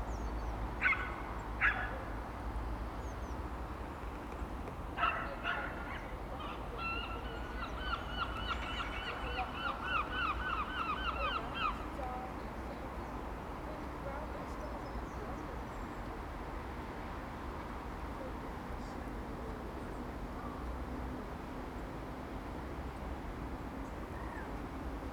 Contención Island Day 37 outer south - Walking to the sounds of Contención Island Day 37 Wednesday February 10th
The Poplars The High Street The Great North Road
Walkers
runners
cyclists
in the snow
Gulls stand on the frozen lake
to lift
and move
to the prospect of food
Carefully balancing his cappuccino
a young man squats
to heel the lake ice
England, United Kingdom, 10 February